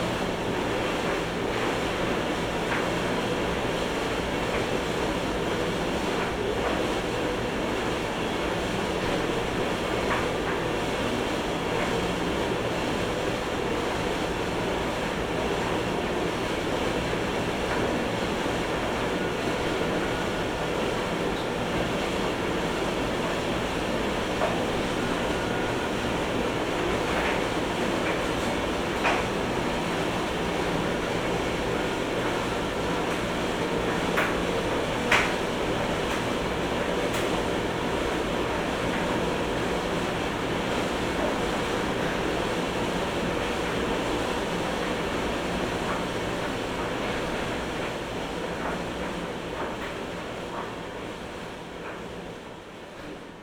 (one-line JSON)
{"title": "workum, het zool: marina building, laundry room - the city, the country & me: laundry room of marina building", "date": "2012-08-04 19:55:00", "description": "tumble dryer in the laundry room\nthe city, the country & me: august 4, 2012", "latitude": "52.97", "longitude": "5.42", "altitude": "255", "timezone": "Europe/Amsterdam"}